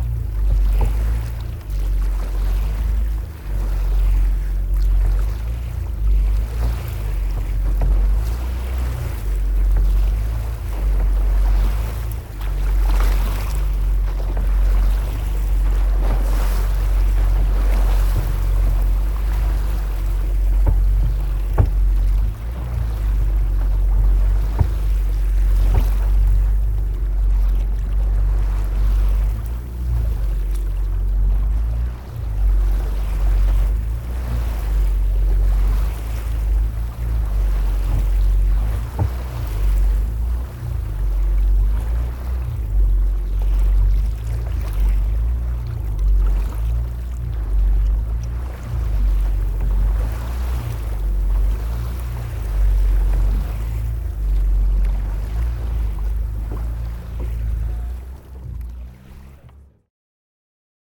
Curonian lagoon, Lithuania, in the boat
Curonian lagoon. Historical wooden boat strugling with wind. Recorded with tiny Instamic recorder.